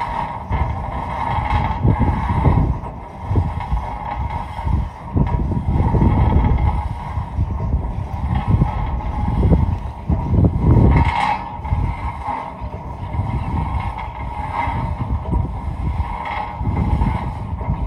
La Blanqueada Coronel Segui, Provincia de Buenos Aires, Argentina - Windmill, wind and birds
On a farm, on a very remote area of the Argentine Pampas. The windmill was turning though not working properly, that is why there is no sound of water coming out.
I thought it might be of some interest